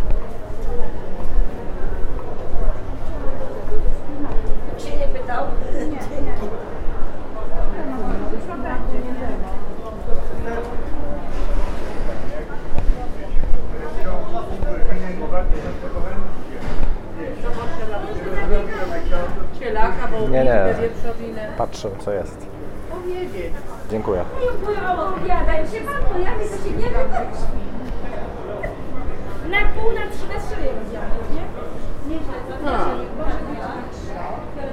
Hala Górniak, ul.Piotrkowska 317 Górna, Łódź, Polska - hall meat/hala mięsna
hall where meat is sold
18 October, ~11:00